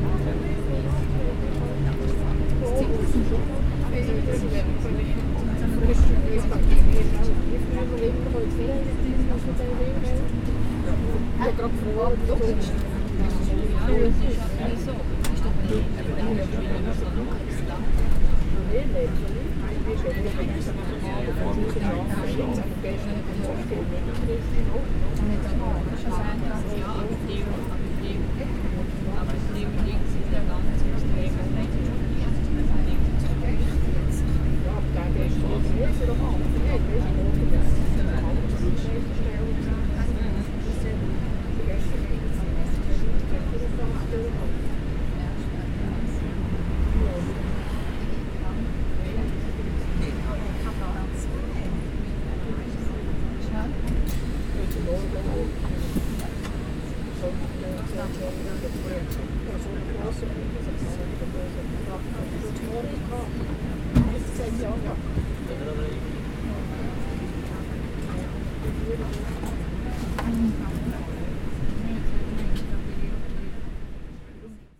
Bus nach Leuk im Wallis
Busgeräusche nach Leuk für Umsteigen in Zug
9 July 2011, ~15:00